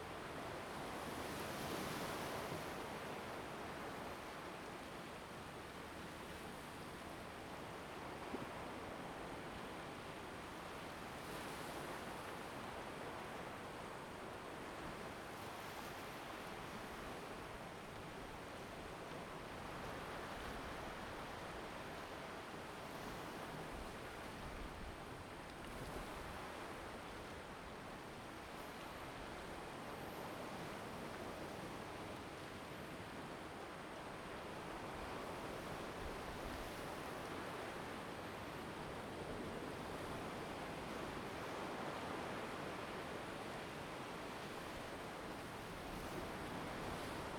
椰油村, Koto island - On the coast
On the coast, sound of the waves
Zoom H2n MS +XY